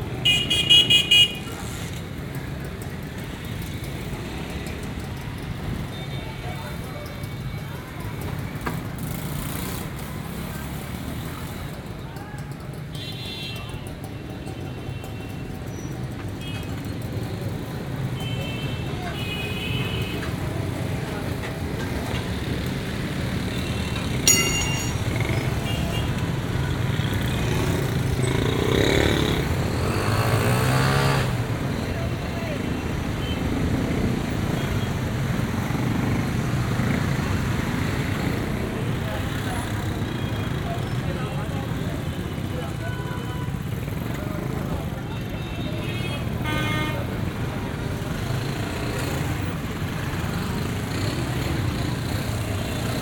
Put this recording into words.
Recorded by the roadside at rush hour. The traffic in Karachi is very dense, formed of cars, vans, motorbikes, rickshaws and carts pulled by animals. Recorded using a Zoom H4N